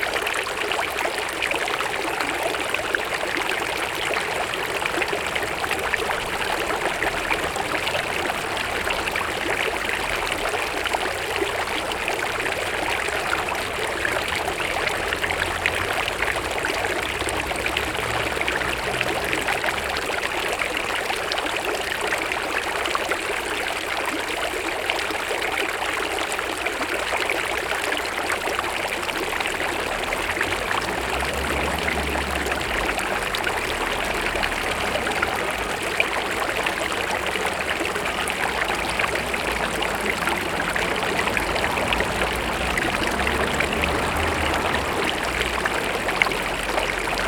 Orléans, fontaine Belmondo
Fontaine femme nue sculptée par lartiste Belmondo, Rue Royale, Orléans (45 - France)
Orléans, France, 16 May 2011